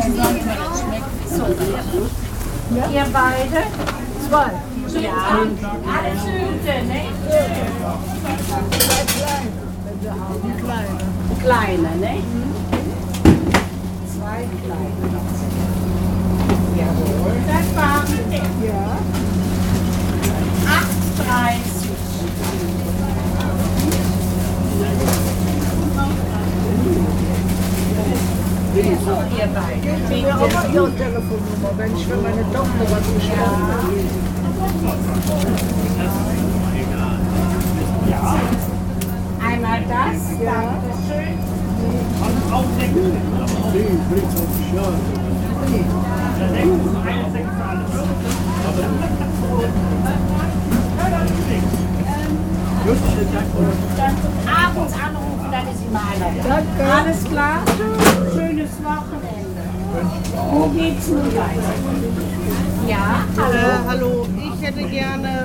Cologne, Sudermanplatz, Deutschland - Market
At the market stand for fowl. Conversations between the market-woman and the clients, the sound of coins, women exchanging recipes how to prepare maize-fed chicken.